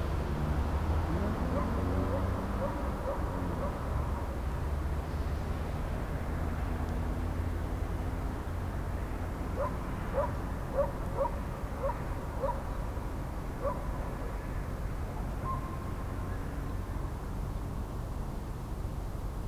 remscheid, kräwinklerbrücke: parkplatz - the city, the country & me: parking
rider on a horse, cars passing by, barking dogs
the city, the country & me: november 10, 2013